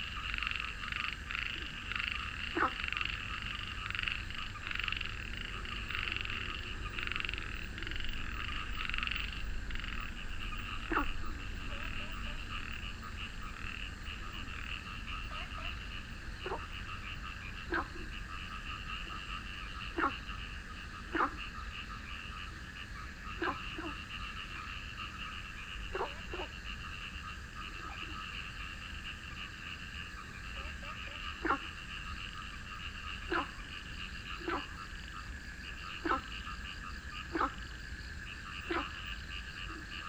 桃米紙教堂, 桃米里桃米巷 - Frogs chirping
Frogs chirping, Traffic Sound
Nantou County, Puli Township, 桃米巷52-12號